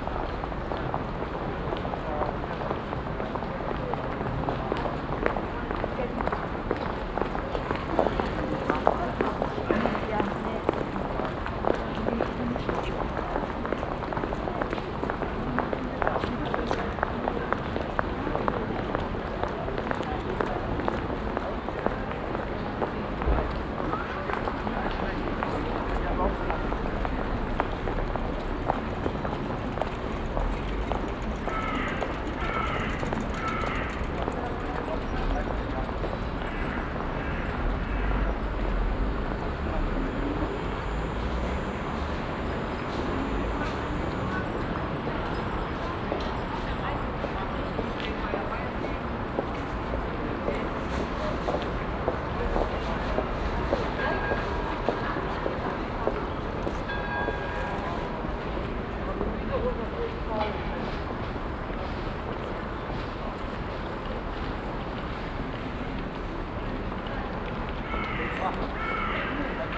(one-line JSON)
{"title": "hilden, mittelstrasse, fussgängerzone", "description": "diverse schritte auf steingefliesstem boden, stimmen, einkaufstüten, ein fahrrad, eine krähe, kleines stundenläuten der reformationskirche, mittags\nsoundmap nrw:\nsocial ambiences/ listen to the people - in & outdoor nearfield recordings", "latitude": "51.17", "longitude": "6.94", "altitude": "58", "timezone": "GMT+1"}